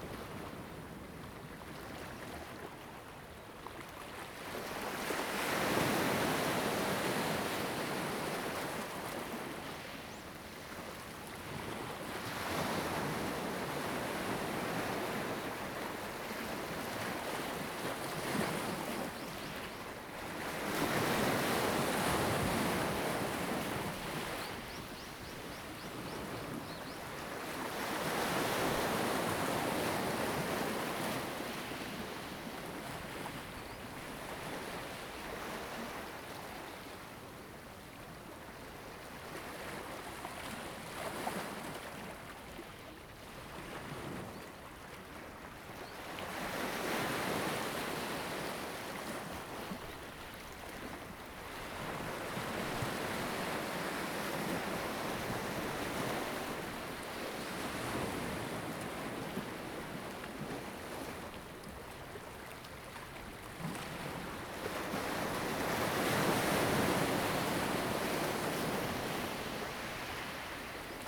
Lanyu Township, Taitung County - In the dock
In the dock, Waves and tides
Zoom H2n MS +XY